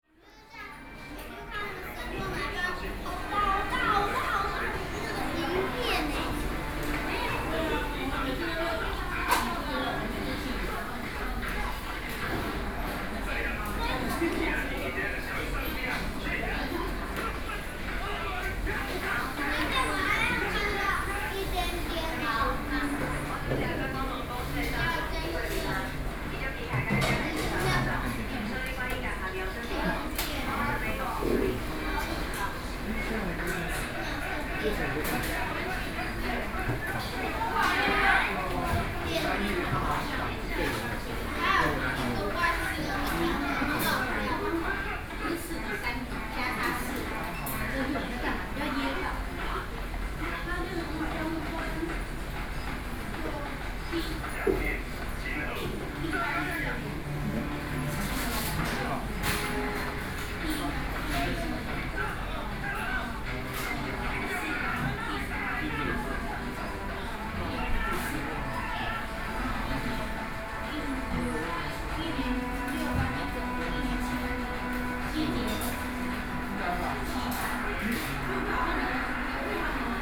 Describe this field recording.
In the Restaurant, Sony PCM D50 + Soundman OKM II